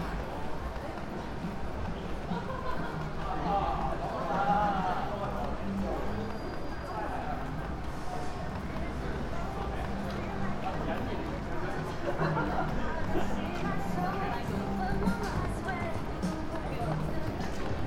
walk along one of the loudest streets in shibuya with an end stop on some backyard